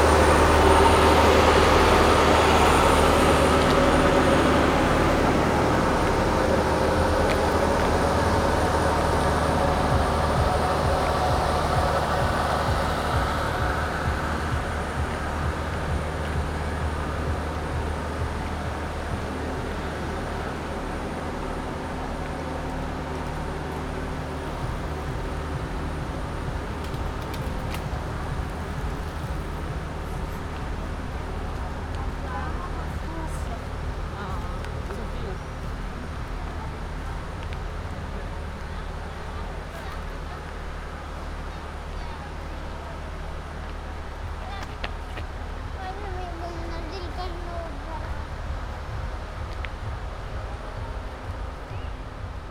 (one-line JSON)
{"title": "luna park, Novigrad, Croatia - glittery and dark side of luna park", "date": "2013-07-15 20:56:00", "description": "amusement park sound scape from front and back side", "latitude": "45.32", "longitude": "13.56", "altitude": "4", "timezone": "Europe/Zagreb"}